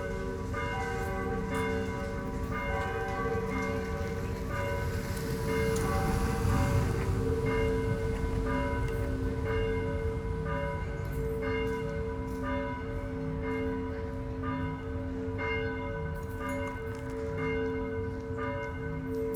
berlin, reuterplatz
bells from two churches at reuterplatz, early summer evening.